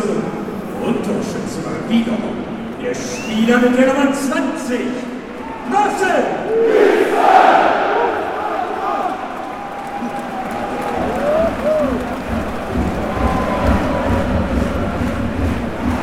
Cologne scores the second goal in the match against Union Berlin (final result 4:0)and the fans celebrate it chanting the Cologne carnival song "un wenn et trömmelche jeht"